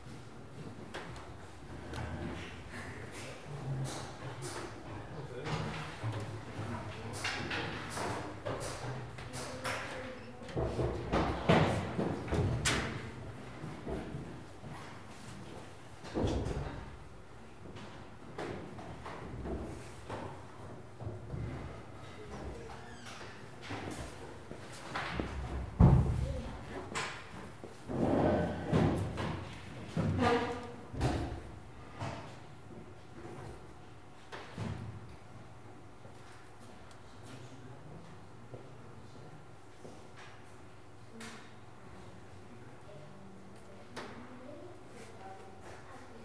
Constitució, La Bordeta, Barcelona, Barcelona, Spain - Study group in Constitució 19 library
I think this is a collectively organised library. Above us on a mezzanine were some kids that seemed to be meeting to do homework.
recorded on a Zoom H4n.